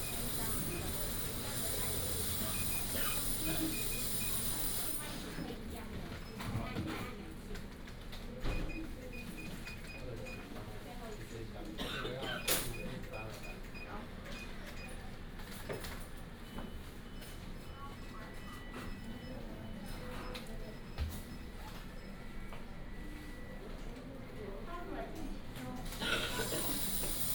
八德郵局, Bade Dist., Taoyuan City - at Post Office
at Post Office
Binaural recordings, Sony PCM D100+ Soundman OKM II
Bade District, 建國路20號, 5 March 2018